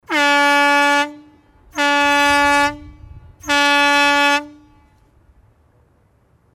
wülfrath, abbaugelände fa rheinkalk, signalhorn
früjahr 07 mittags signalhorn zur freigabe der täglichen sprengung, in europas grösstem kalkabbaugebiet
soundmap nrw - sound in public spaces - in & outdoor nearfield recordings